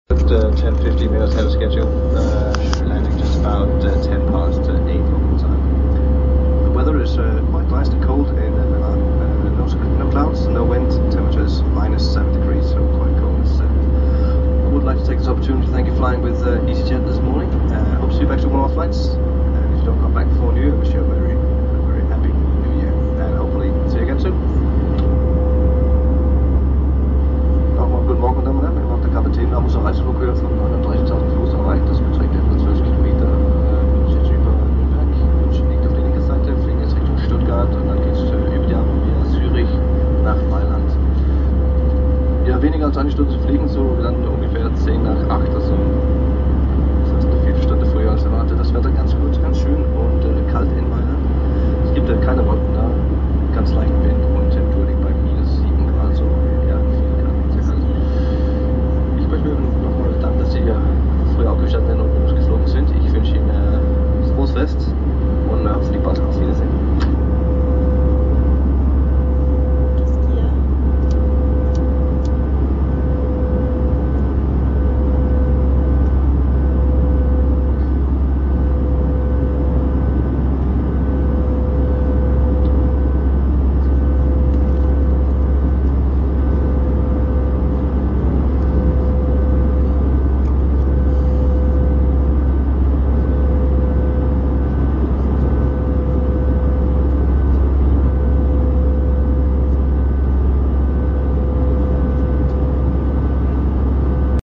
Flugzeug Richtung Milano
Die beruhigende Stimme des Kapitäns täuscht über die lächerliche Situation hinweg, in der der Fluggast sich befindet: 10000 m über der Erde, eingeschlossen in einer Röhre aus Aluminium und Kerosin. Dann die vermeintliche Stille. Das Mikrophon registriert, was der Mensch mit Höhenangst nicht hört: die Flug-Maschine.
Baden-Württemberg, Deutschland, European Union